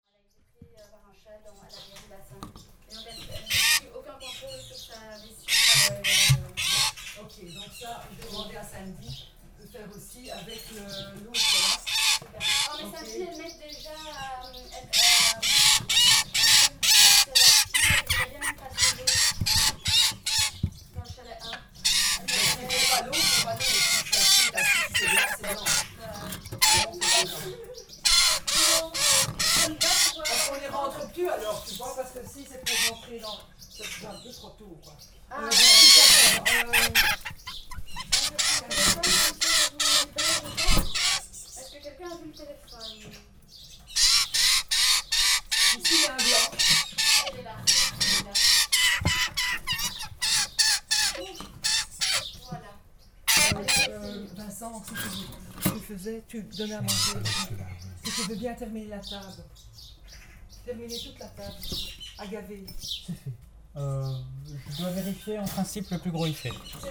Ottignies-Louvain-la-Neuve, Belgique - Birdsbay, hospital for animals
Birdsbay is a center where is given revalidation to wildlife. It's an hospital for animals. This recording is the moment where is given food to the magpies.